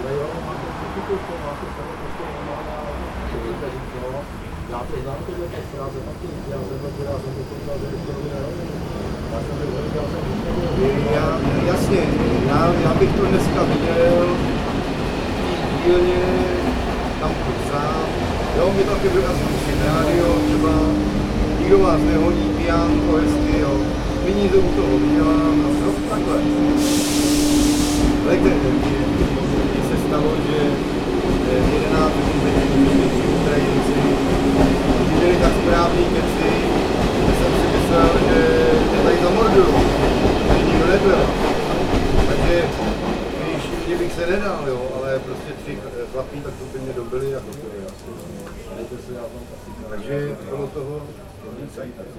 Restaurant at the railway station Bubeneč
When you get off from the train in the railway station in Bubeneč, you have to pass around classic Nádražka pub with small garden. It is very popular not only for passengers waiting for the train because of very small prices. Pubs called Nádražka like this one, became certain local colour of small railway station in all over the Prague. Passenger and goods trains constantly passing around, create forced pauses in never ending conversations of local barflies. Just innkeeper never calm down.